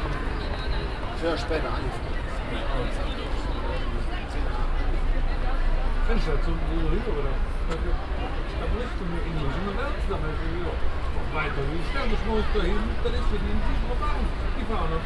cologne, Burgmauer, Taxifahrer Konversation - koeln, burgmauer, taxistand 04
konversationen wartender taxifahrer an kölns touristenmeile dom - zufalls aufnahmen an wechselnden tagen
soundmap nrw: social ambiences/ listen to the people - in & outdoor nearfield recordings